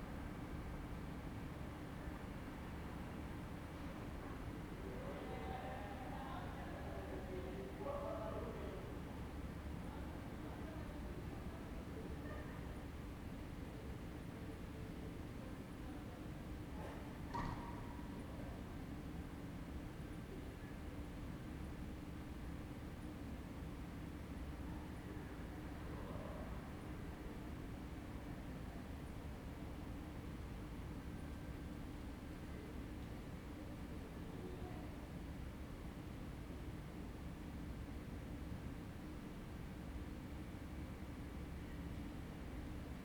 Ascolto il tuo cuore, città, I listen to your heart, city. Several chapters **SCROLL DOWN FOR ALL RECORDINGS** - Round midnight students at college in the time of COVID19: Soundscape
"Round midnight students at college in the time of COVID19": Soundscape
Chapter CXXXVI of Ascolto il tuo cuore, città. I listen to your heart, city
Thursday, October 21st 2020, six months and eleven days after the first soundwalk (March 10th) during the night of closure by the law of all the public places due to the epidemic of COVID19.
Start at 11:36 p.m. end at 00:07 a.m. duration of recording 30’41”
Torino, Piemonte, Italia, 22 October 2020